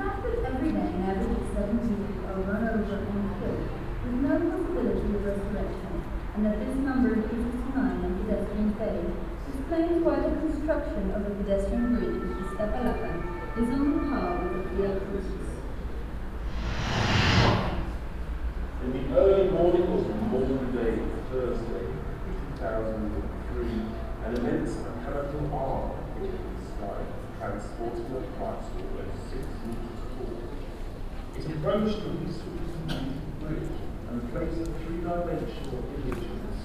cologne, josef-haubricht hof, videoinstallation zu plan08
temporäre videoinstallation zu plan 08 im offenen hof der volkshochschule (VHS)
soundmap nrw: social ambiences, topographic field recordings
23 September 2008, ~09:00